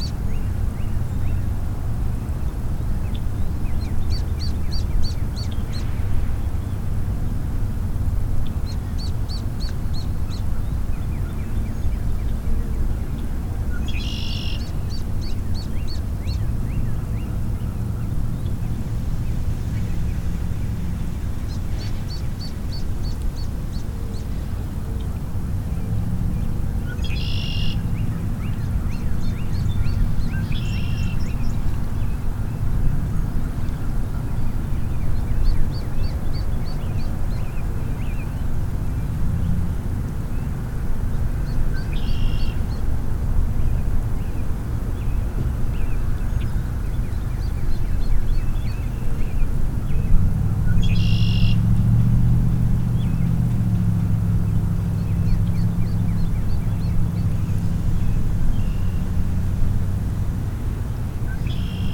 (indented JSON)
{
  "title": "East Bay Park, Traverse City, MI, USA - Water's Edge (East Bay Park)",
  "date": "2014-06-27 17:15:00",
  "description": "Facing East Arm, Grand Traverse Bay. Small waterfowl in the distance; one passes closely. Red-winged blackbird at right, middle-distance. Recorded about three feet from the water, while atop a platform made from wooden pallets. Recorded on a Tuesday following Memorial Day weekend. Stereo mic (Audio-Technica, AT-822), recorded via Sony MD (MZ-NF810).",
  "latitude": "44.76",
  "longitude": "-85.58",
  "altitude": "175",
  "timezone": "America/Detroit"
}